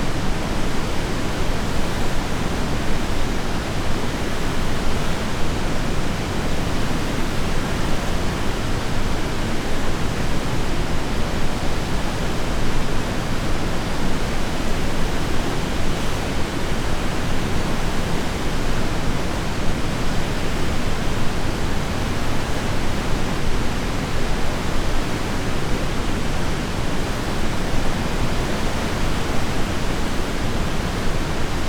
August 10th 2022, Uiam Dam after heavy rains